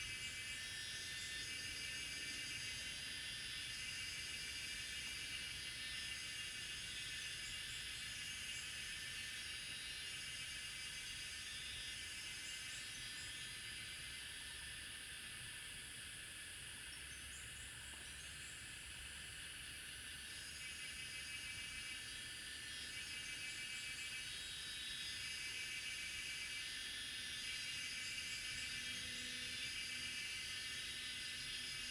水上巷, 桃米里 - Cicada and birds sounds
Cicada sounds, Birds singing, face the woods
Zoom H2n MS+ XY